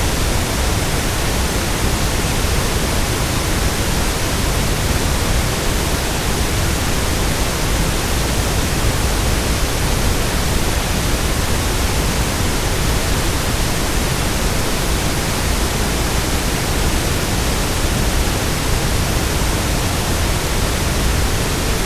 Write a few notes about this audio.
Les grondements du Chéran au barrage de Banges .